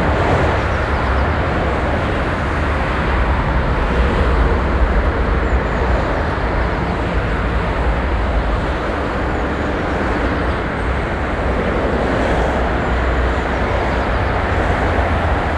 Südostviertel, Essen, Deutschland - essen, pedestrian bridge across highway A40
In the morning time on a pedestrian bridge that leads acros the highway A40.
The sound of traffic.
Morgens auf einer Fussgängerbrücke die hier die Autobahn A 40 kreuzt. Der Klang des Verkehrs.
Projekt - Stadtklang//: Hörorte - topographic field recordings and social ambiences
9 April 2014, ~07:00